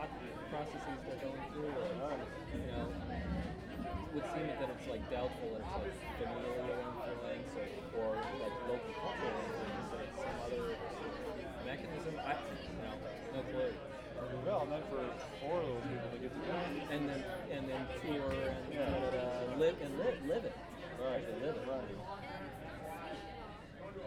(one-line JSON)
{"title": "neoscenes: folk band at the Artisan", "latitude": "38.95", "longitude": "-92.33", "altitude": "230", "timezone": "GMT+1"}